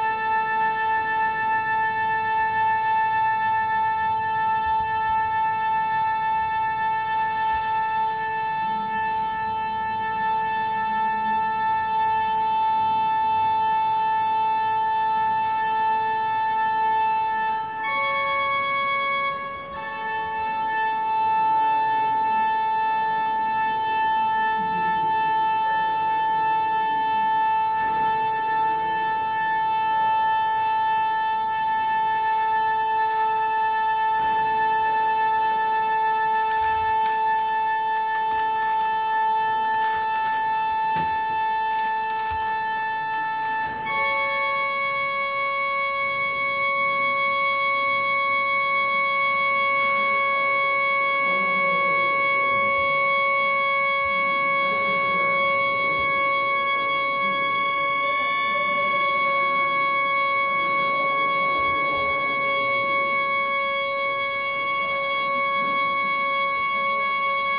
Lübeck, Altstadt, St. Jakobi Kirche zu Lübeck - pipe organ tuning
pipe organ being tuned at the church of saint Jacob. wonderful experience of subtle changing frequencies, reverberated and thus interfering with each other. outside a speeding motorcycle, also reverberated inside the church's body. quite stunning acoustics at this church. visitors talking and walking around the place.
motorcycle
Lübeck, Germany, 3 October 2013, 13:01